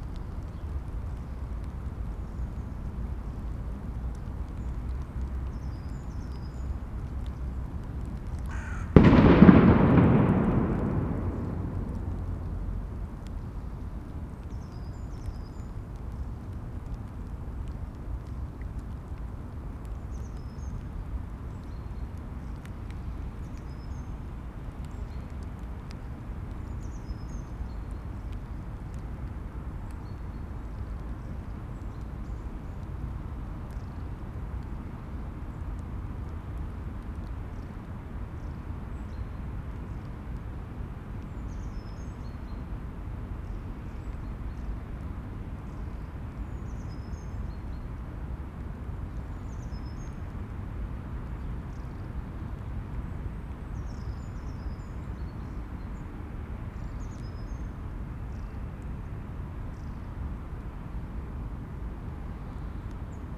berlin: heinrich-von-kleist-park - the city, the country & me: rain drops
rain drops on dry leaves, distant traffic drone
the city, the country & me: february 15, 2014